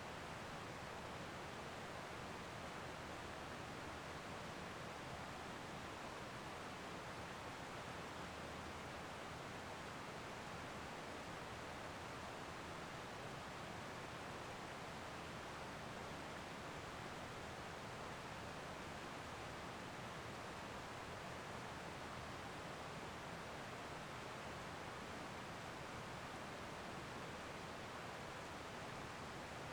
Devils Den State Park - Night Time Campground Sounds
Night time sounds of Campground E at Devils Den State Park. It is mostly quiet with the exception of Lee Creek running in the background.